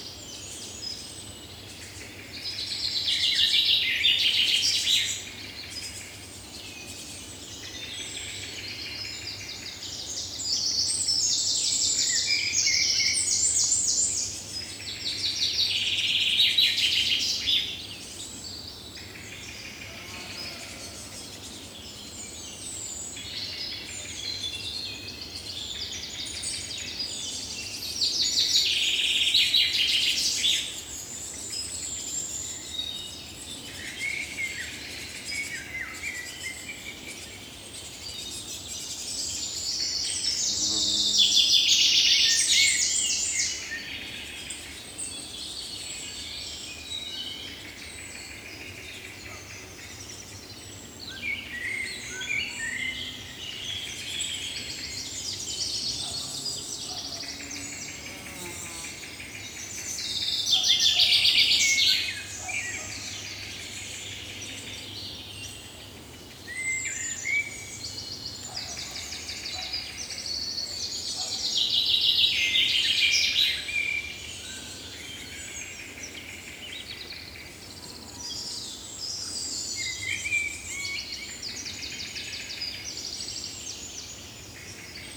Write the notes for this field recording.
Belgian woods are not forests. It's an accumulation of trees. Inside run deep paths. You will find here shouting cyclists and noisy walkers. Above, it's an uninterrupted flight of take-off and landings. Downstairs is a tourist site: the Aulne abbey. An old vehicles parade makes a devil noise on the cobblestones. On the right is the village of Landelies. Sunday morning is a fine day today. A motorcycle concentration occupies the roads. At the top is Montigny-Le-Tilleul. Strident ambulances tear apart the soundscape. Belgium is that. It's nothing more than a gigantic pile of noise pollution, whatever the time whatever the day. A moment, you have to mourn. The forest in Belgium no longer exists. These recordings made in the woods concentrate three hours of intense fighting, trying to convince oneself that something is still possible. Something is still possible ? Common Chaffinch, lot of juvenile Great Tit, Blackbird.